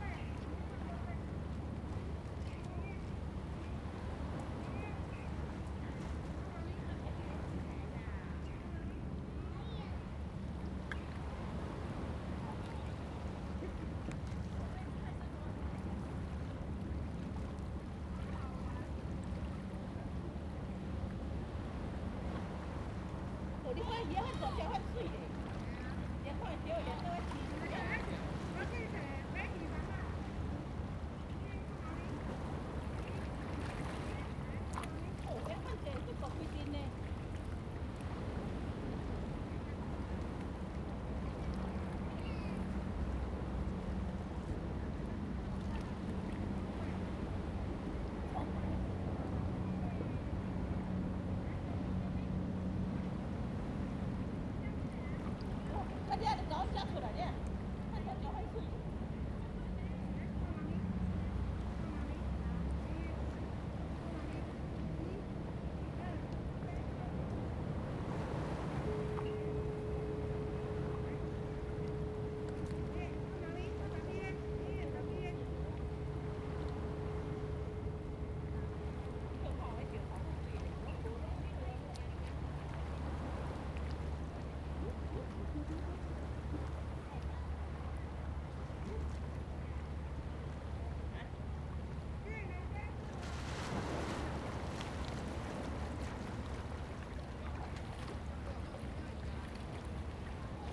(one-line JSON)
{"title": "花瓶岩, Hsiao Liouciou Island - Small beach", "date": "2014-11-01 09:46:00", "description": "In the small coastal, Sound of the waves, Tourists, Cruise whistle\nZoom H2n MS +XY", "latitude": "22.36", "longitude": "120.38", "altitude": "2", "timezone": "Asia/Taipei"}